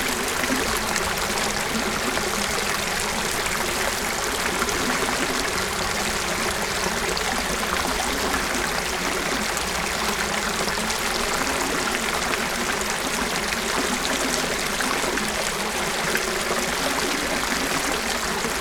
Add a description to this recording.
Groelstbeek river near the big stone. SD-702, Me-64, random position.